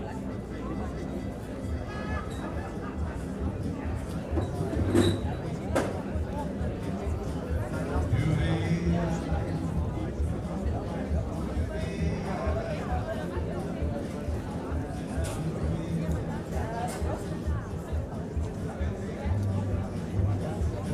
berlin, john-foster-dulles-allee: haus der kulturen der welt, terrasse - the city, the country & me: terrace of house of the cultures of the world
at the terrace during a concert of giant sand at wassermusik festival
the city, the country & me: august 5, 2011
Berlin, Germany, 5 August